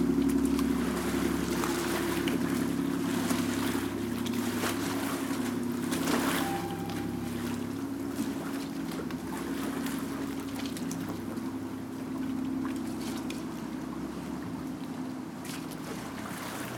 Fondamenta de la Misericordia, Venezia VE, Italy - Fondamenta de la Misericordia
Venice. Saturday morning.
Veneto, Italia, 17 September 2022, 12:00